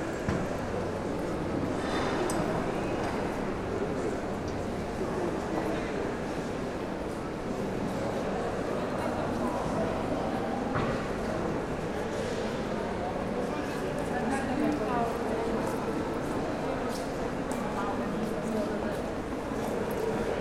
Vilnius Art11 fair - walk through
short walkthrough international Vilnius Art11 fair